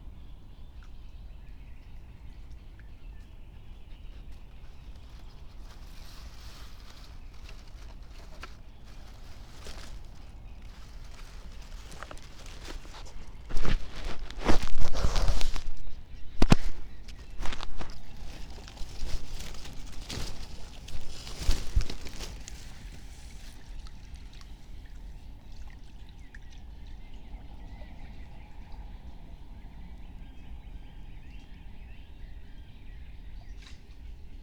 04:00 Berlin, Buch, Mittelbruch / Torfstich 1 - pond, wetland ambience
early morning ambience, a creature is investigating the hidden microphones again.
Deutschland, 15 May 2021